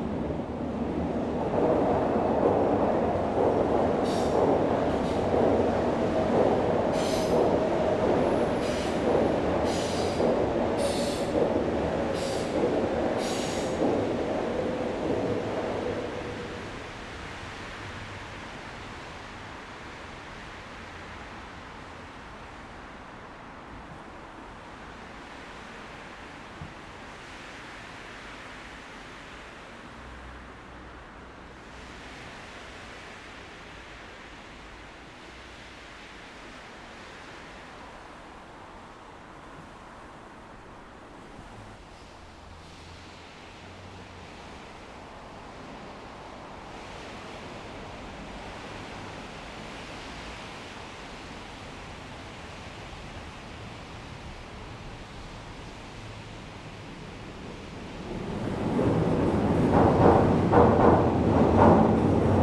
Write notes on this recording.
Trens, cotxes i aigua sota el pont. Trains, cars and water under the bridge. Trenes, coches y agua debajo del puente.